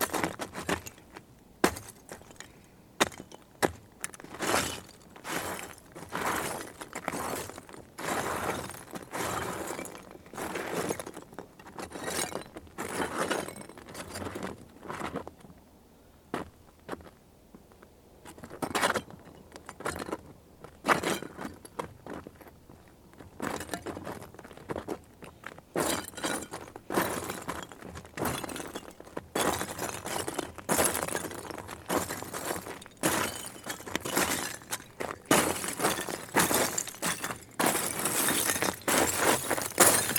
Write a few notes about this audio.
Metabolic Studio Sonic Division Archives: Walking on dry salt flat of Owens Dry Lake. Recorded with Zoom H4N